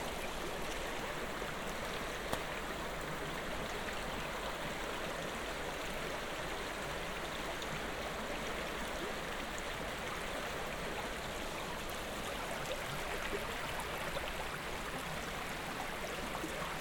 grube louise, walk thru high grass to small river
daytime walk thru high grass and dry branches to a small river
soundmap nrw: social ambiences/ listen to the people - in & outdoor nearfield recordings
13 May 2009